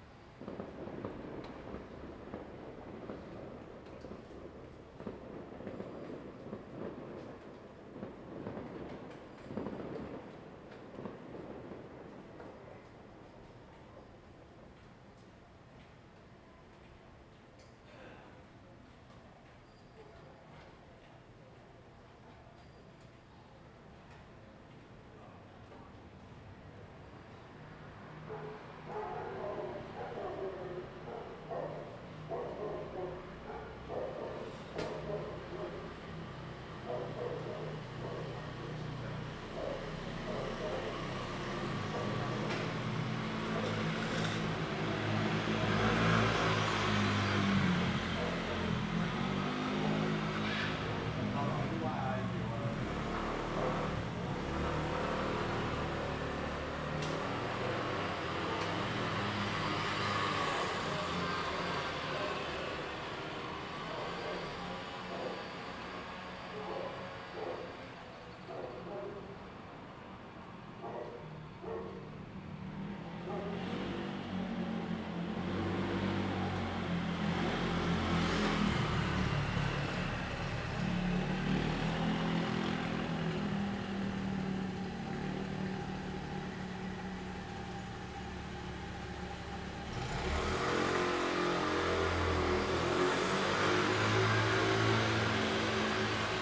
Daren St., Tamsui District - old community Night
old community Night, Traffic Sound, The distant sound of fireworks
Zoom H2n MS +XY
New Taipei City, Taiwan